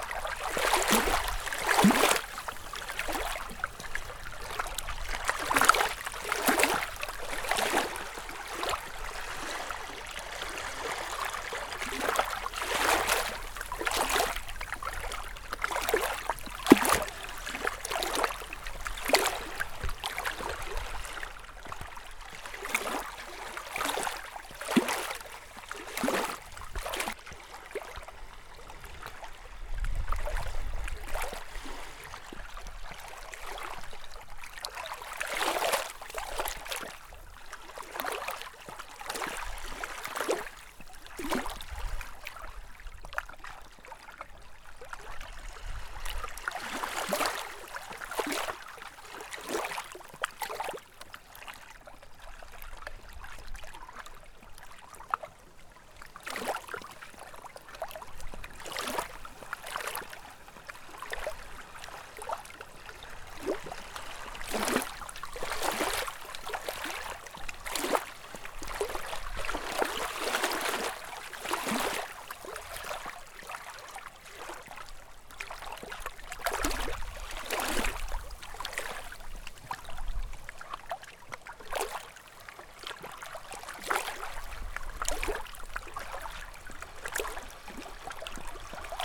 lakewise, lakeside, lake sound - a few days in complete silence walking around. The track takes 7 minutes and takes you from watersounds to the silence of the forests. (Recorded with Zoom4HN).